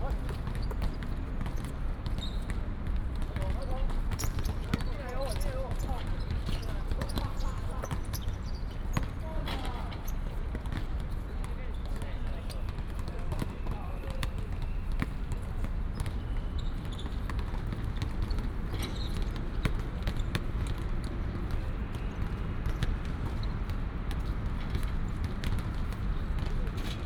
臺北科技大學, Taipei City - Basketball court
Basketball court
Binaural recordings
Sony PCM D100 + Soundman OKM II